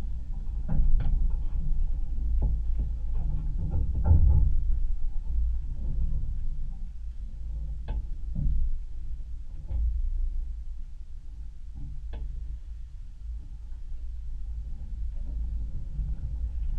contact microphones on a piece of thrown away fence found in the forest